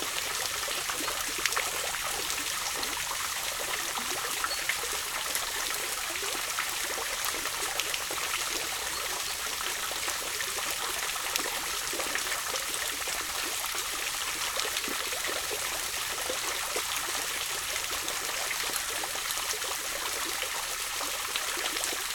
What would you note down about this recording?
Reinhardswald, Rundweg Nr 6 von Schneiders Baum Wasserbecken